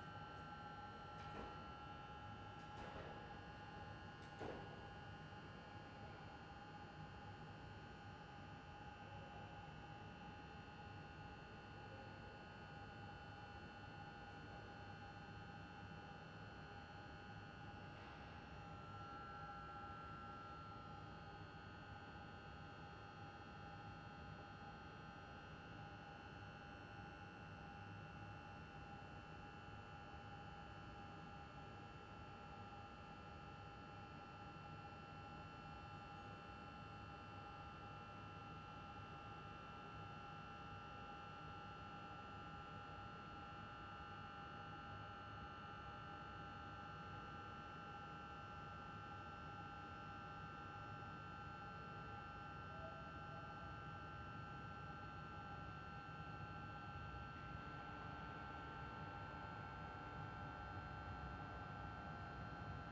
Milano Porta Vittoria - Train coming into the underground station of Porta Vittoria
Warning: start with a low volume. The trains passing through this station seem like they become noisier every day. Recorded with a Zoom H6 Handy Recorder, XY 90° capsule.
Lombardia, Italia